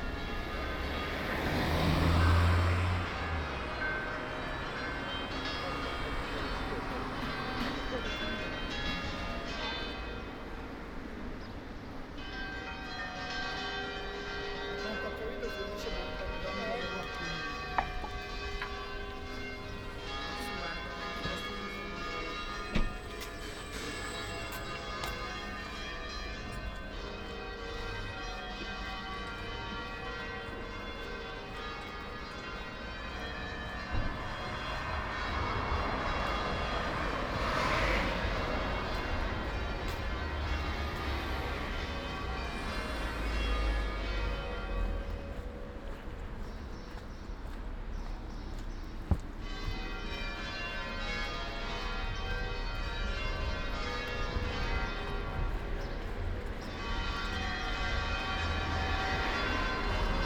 Ascolto il tuo cuore, città. I listen to your heart, city. Several chapters **SCROLL DOWN FOR ALL RECORDINGS ** - Sunday walk with ice cream and bells in the time of COVID19 Soundwalk
"Sunday walk with ice cream and bells in the time of COVID19" Soundwalk
Chapter XCIII of Ascolto il tuo cuore, città. I listen to your heart, city
Sunday, May 31st 2020. San Salvario district Turin, walk to a borderline “far” destination. One way trip eighty-two days after (but day twenty-eight of Phase II and day fifteen of Phase IIB and day nine of Phase IIC) of emergency disposition due to the epidemic of COVID19.
Start at 11:42 a.m. end at 00:18 p.m. duration of recording 26'10''
The entire path is associated with a synchronized GPS track recorded in the (kmz, kml, gpx) files downloadable here:
Piemonte, Italia, 31 May 2020, 11:42